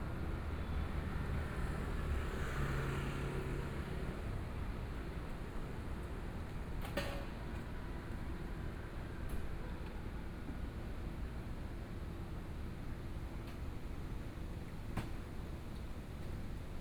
台北市中山區中央里 - In the Street
Walking through the Street, Environmental sounds, Motorcycle sound, Traffic Sound, Binaural recordings, Zoom H4n+ Soundman OKM II
Taipei City, Taiwan, 6 February 2014, 17:36